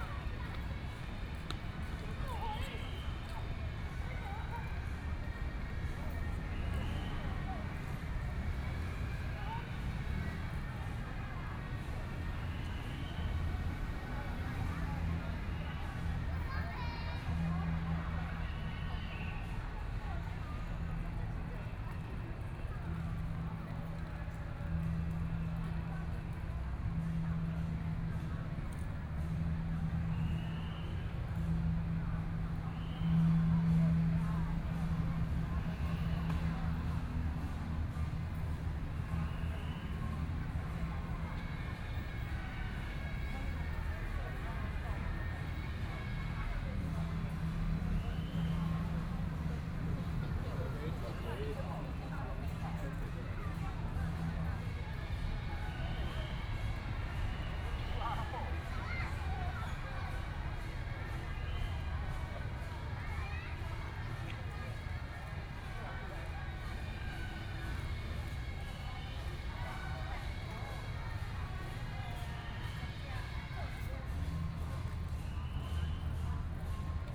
Sitting in the park, Fireworks sound, Footsteps, Traffic Sound
Please turn up the volume a little. Binaural recordings, Sony PCM D100+ Soundman OKM II
Taipei City, Taiwan, 12 April, 21:30